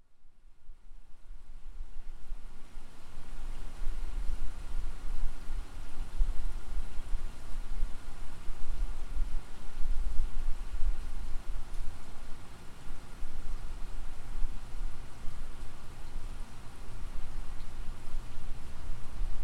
{
  "title": "Mid-Town Belvedere, Baltimore, MD, USA - Midnight Fountain",
  "date": "2016-11-19 23:26:00",
  "description": "From the fifth floor in Fitzgerald recording the meditative garden's sound at midnight.\nCold, weather was clear.",
  "latitude": "39.31",
  "longitude": "-76.62",
  "altitude": "27",
  "timezone": "America/New_York"
}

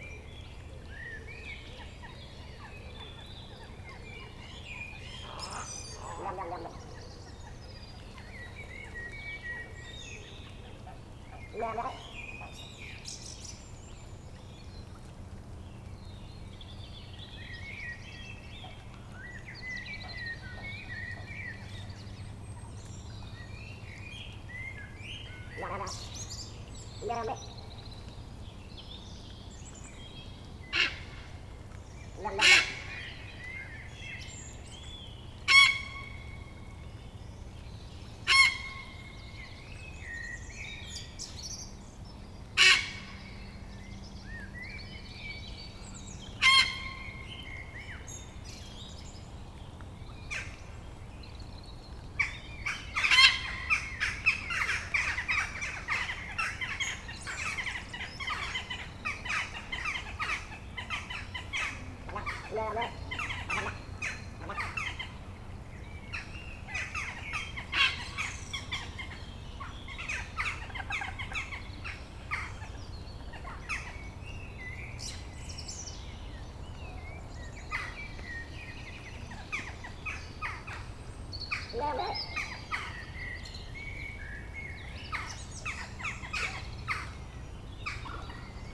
{
  "title": "Atlantic Pond, Ballintemple, Cork, Ireland - Heron Island: Dusk",
  "date": "2020-04-26 20:55:00",
  "description": "Lots of birds, including a Robin, Ducks, Blackbirds, Little Grebe, Little Egret, Crows, Heron chicks making a ruckus, and some fantastic adult Heron shrieks. A pair of Swans glide past.\nRecorded on a Roland R-07.",
  "latitude": "51.90",
  "longitude": "-8.43",
  "altitude": "3",
  "timezone": "Europe/Dublin"
}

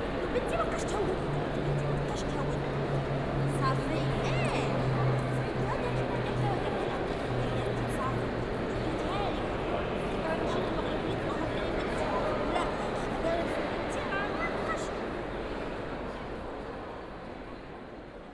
{
  "title": "Airport Marrakesch-Menara - great hall ambience, ground floor",
  "date": "2014-03-01 10:35:00",
  "description": "while recording the hum of this airport, i was wondering about the similarity of controled and regulated airport ambiences in general.\n(Sony D50, OKM2)",
  "latitude": "31.60",
  "longitude": "-8.03",
  "timezone": "Africa/Casablanca"
}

